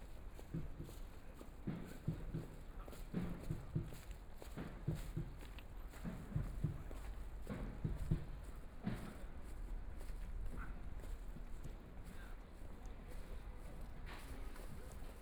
Pudong New Area, China - soundwalk
Away from the main road into the community of small streets, Walk through the school next
Communities, small market, Binaural recording, Zoom H6+ Soundman OKM II
Shanghai, China, November 2013